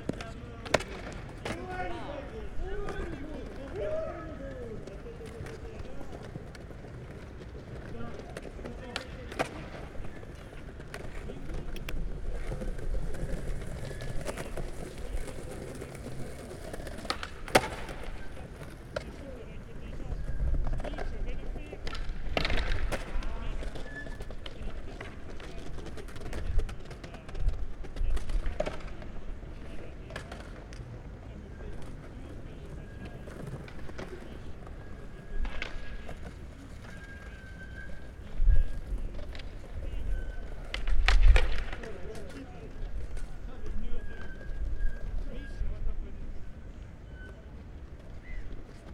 Créteil, France - Créteil Skaters
Skateboarders and roller-bladers practising outside the Mairie de Créteil; something straight out of Tativille.
13 March